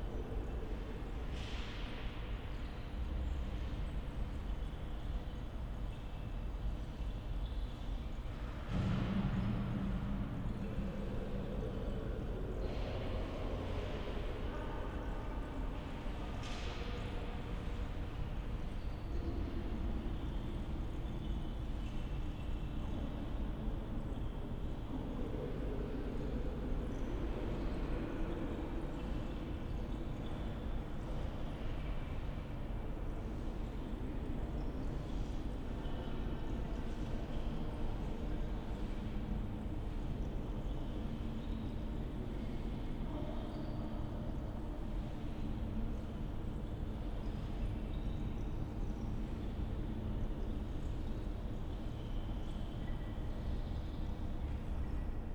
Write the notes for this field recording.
reverberating voices in the crematorium hall. (tech note: SD702, Audio Technica BP4025)